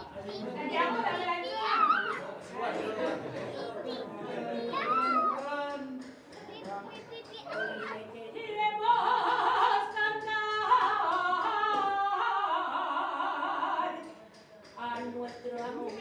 2 Jotas, regional traditional songs, sang at a celebration in a restaurant. From outside in the distance is someone hammering, and nearby are sounds from people talking outside, children playing, and the road.
Recorded on a Zoom H2n internal mics.